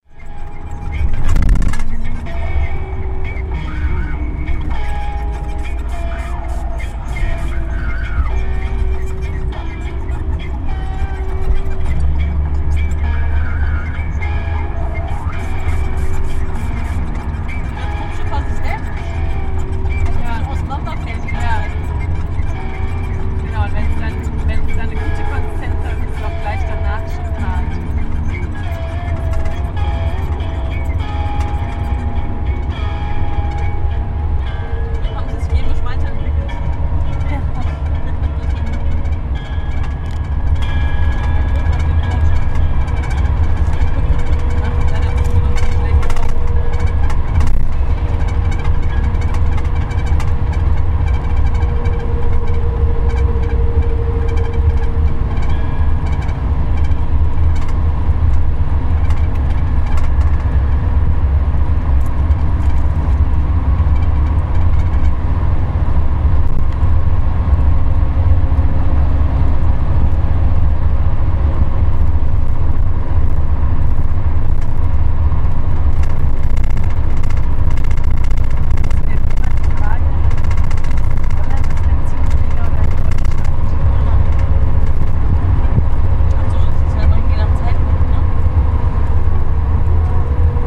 bernhard-nocht str 16 to the antipodes
movement and inadvertant sound collusion
Deutschland, European Union